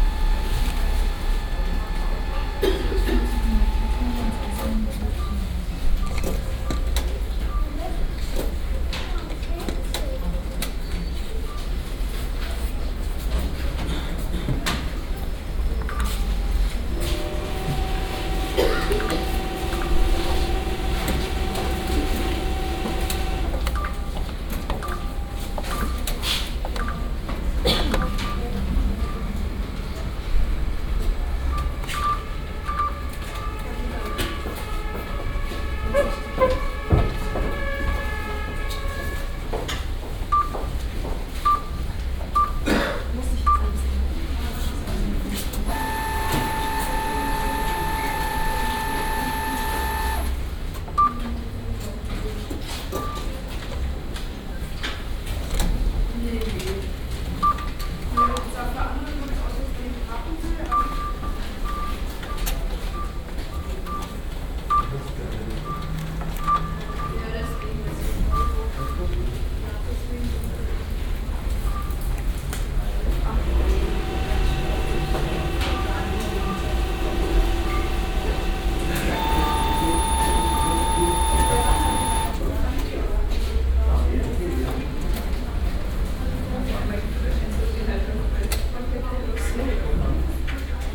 sparkasse / savings bank urbahnstr. - entrance area, cash machines
17.02.2009 12:30 Sparkasse Urbahnstr., Schalterraum, Geldautomaten / savings bank, entrance area, cash machines